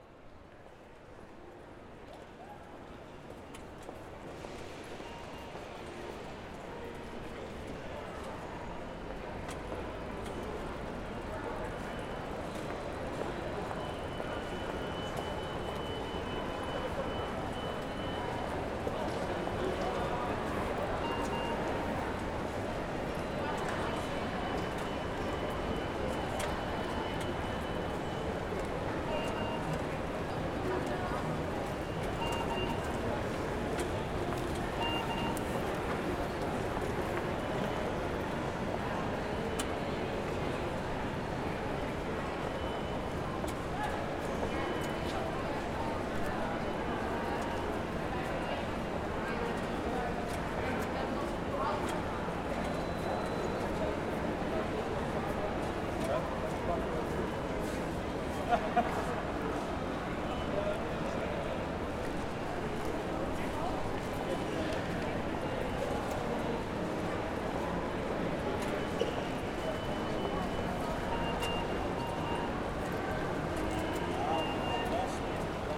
Sound of the machines that check the tickets, the international train to Düsseldorf is announced, walk to the platform, the train arrives. Test how the sound changed after seven years and a new station hall.
Recorded with DR-44WL.